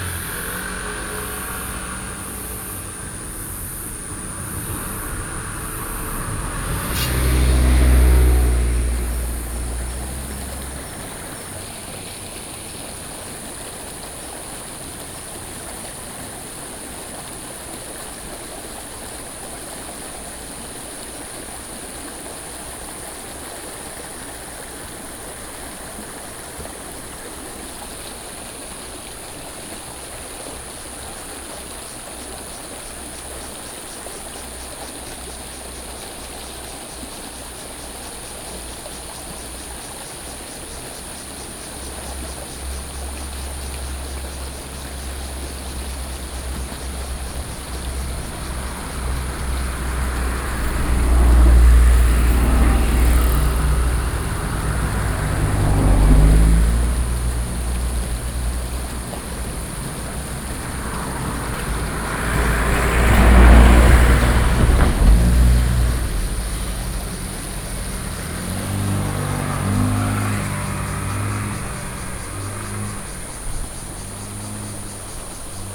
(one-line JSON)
{"title": "北港溪, Xizhi Dist., New Taipei City - Stream", "date": "2012-07-16 08:42:00", "description": "Stream, Cicada sounds, Traffic Sound\nSony PCM D50(soundmap 20120716-28,29 )", "latitude": "25.10", "longitude": "121.64", "altitude": "53", "timezone": "Asia/Taipei"}